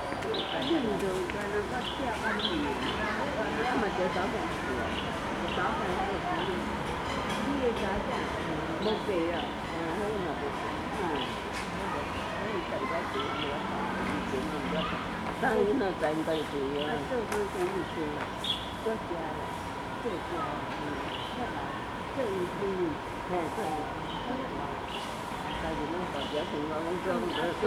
Ln., Sec., Xingnan Rd., Zhonghe Dist., New Taipei City - Old woman
Old woman, Traffic Sound, Small park, Birds singing
Sony Hi-MD MZ-RH1 +Sony ECM-MS907